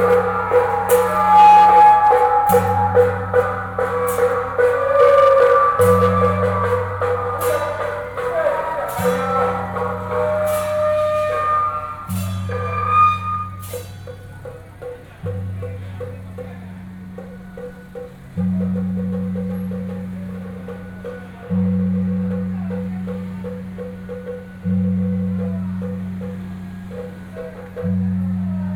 Huaxi St., Wanhua Dist., Taipei City - Traditional temple festivals
Taipei City, Taiwan, 4 December, ~16:00